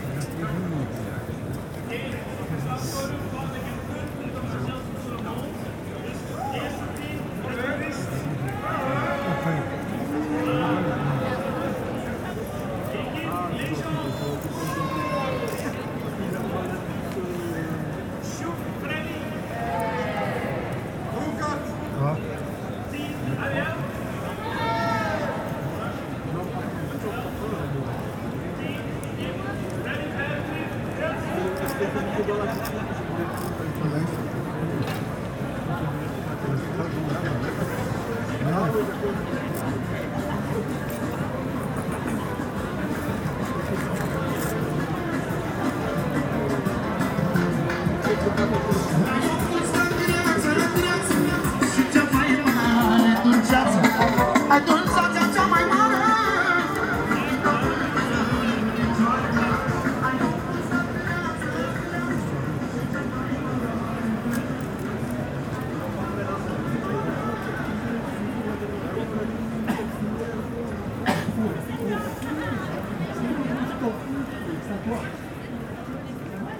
Grand Place, Bruxelles, Belgique - Grand-Place with tourists
Tech Note : Ambeo Smart Headset binaural → iPhone, listen with headphones.